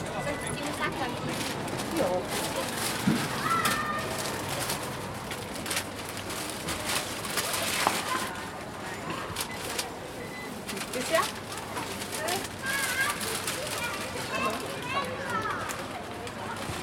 Erzabt-Klotz-Straße, Salzburg, Österreich - Biomarkt Unipark
Jeden Freitag BIO Markt am Unipark Nonntal (Vorübergehend zum Standort Kajetanerplatz, der renoviert wird )
Every Friday BIO Market at Unipark Nonntal (Temporarily to the Kajetanerplatz location which is renovated).